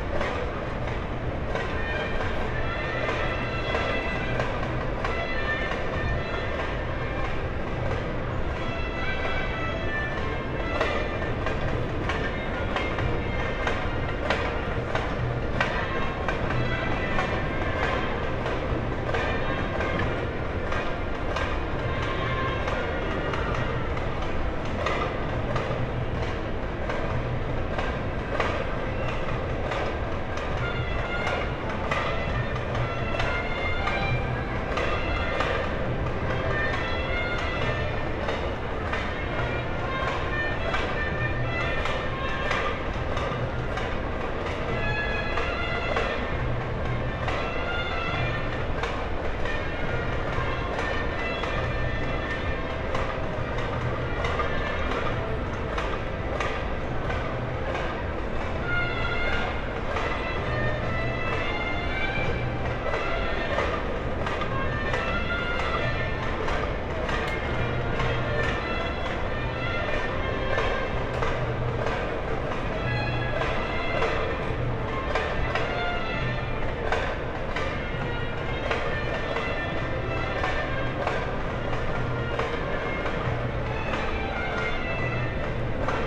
frankfurt/main, zeil: zeilgalerie - the city, the country & me: on the roof of the zeilgalerie shopping mall
on a small staircase on the roof of the shopping mall, church bells, balkan orchestra in the pedestrian zone
the city, the country & me: september 27, 2013